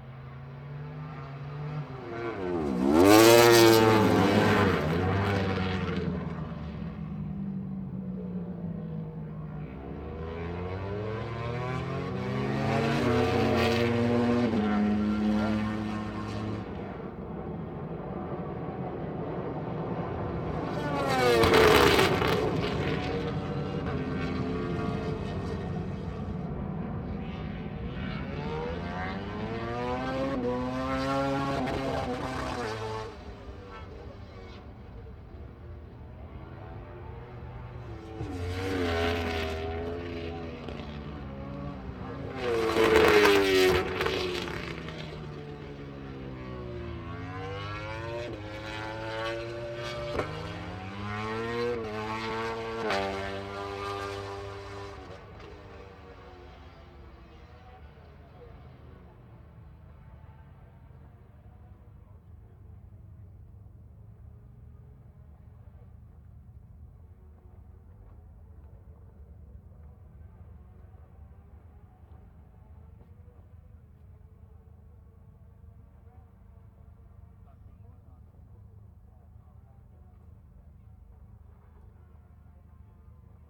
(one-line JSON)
{"title": "Unnamed Road, Derby, UK - british motorcycle grand prix 2006 ... motogp free practice 1", "date": "2006-06-30 10:00:00", "description": "british motorcycle grand prix 2006 ... free practice 1 ... one point stereo mic to minidisk ...", "latitude": "52.83", "longitude": "-1.37", "altitude": "81", "timezone": "Europe/London"}